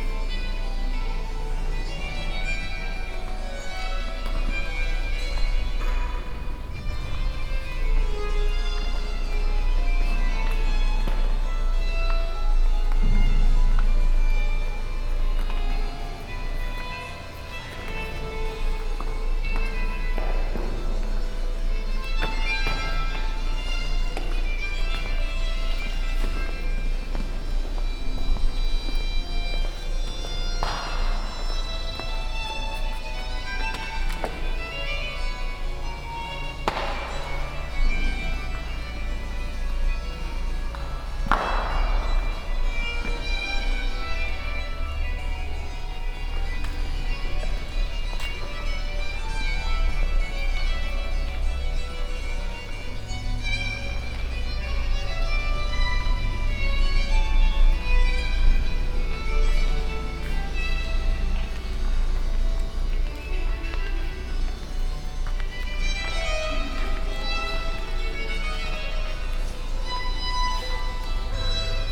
l'isle sur la sorgue, church
Inside the Notre-Dame-des-Anges church. The sound of Renaissance music and visitors in the big church hall.
international village scapes - topographic field recordings and social ambiences